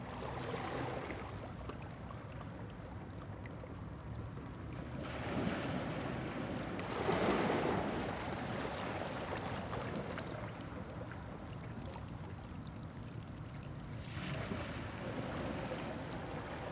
Recording from a rockpool just as the tide was starting to come back in. Water was running down a channel between the rocks and with each wave bubbles of air escaped from under rocks and seaweed.